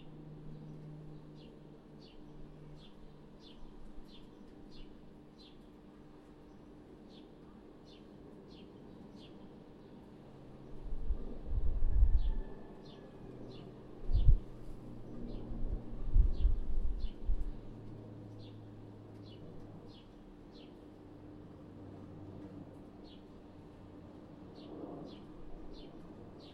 Ramallah - friday afternoon outside 1
first go! recorded on zoom H1
22 May, 15:39